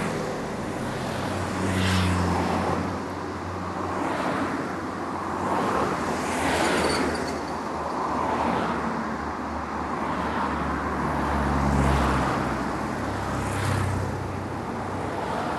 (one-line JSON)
{"title": "london, traffic at river thames", "description": "recorded july 18, 2008.", "latitude": "51.48", "longitude": "-0.16", "altitude": "11", "timezone": "GMT+1"}